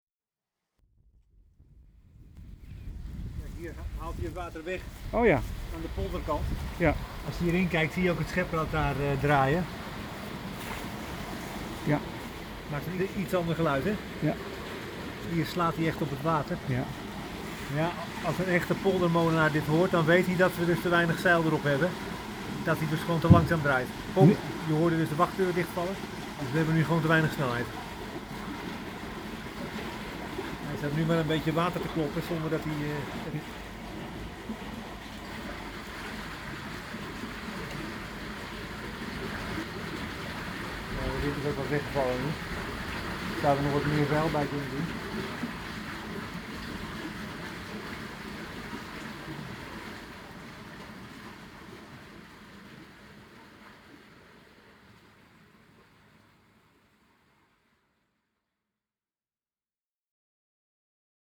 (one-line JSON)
{"title": "het malen aan de polderzijde", "date": "2011-07-09 16:20:00", "description": "geluid van het scheprad\nsound of the scoop rad", "latitude": "52.15", "longitude": "4.44", "altitude": "1", "timezone": "Europe/Amsterdam"}